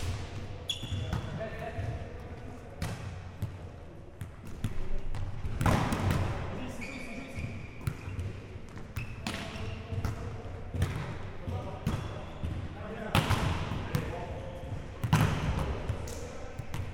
Mont-Saint-Guibert, Belgique - Centre sportif
This is the sportive hall of Mont-Saint-Guibert. This wide hall is used by two villages. This is a great place for sports. Here, a few people are playing volley-ball, as training.